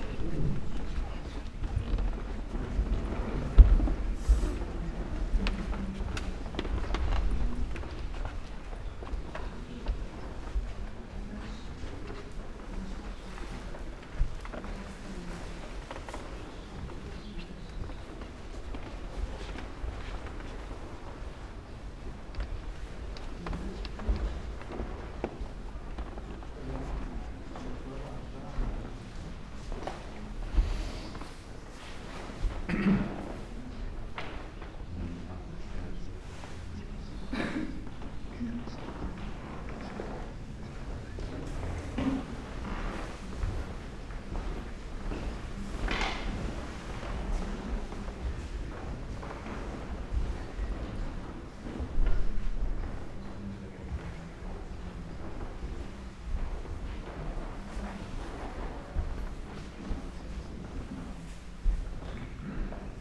slow walk through rooms with different kind of wooden floors and parquet, aroundgoers and their steps, whisperings, plastic raincoats and plastic bags for umbrellas
18 May 2013, 14:59